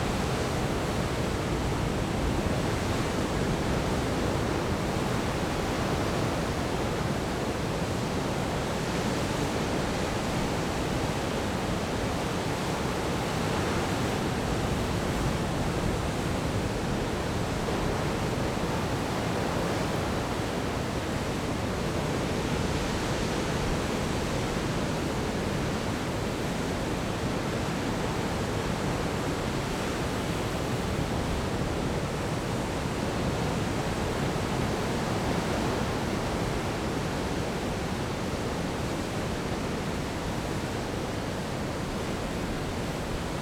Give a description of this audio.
Sound of the waves, Very hot weather, Zoom H6+ Rode NT4